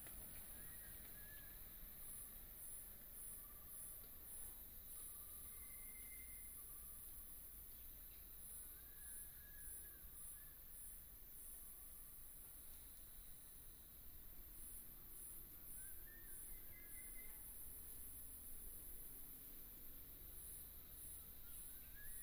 哭泣湖自然生態園區, Mudan Township - Birds and Traffic sound
Beside the road, The sound of Birds, Mountain road, Traffic sound
Mudan Township, 199縣道, 2 April 2018